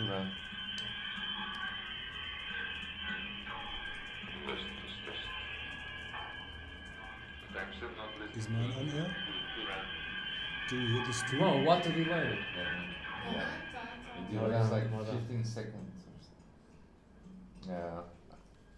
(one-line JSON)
{"title": "Lisboa, RadiaLX radio festival - soundcheck", "date": "2010-06-30 23:40:00", "description": "third edition of RadiaLx, Portugal's unique festival dedicated to radio art, an International Radio Art Festival happening in Lisboa.\nsoundcheck for radialx stream launch at midnight, at the secret headquarter...", "latitude": "38.75", "longitude": "-9.13", "altitude": "74", "timezone": "Europe/Lisbon"}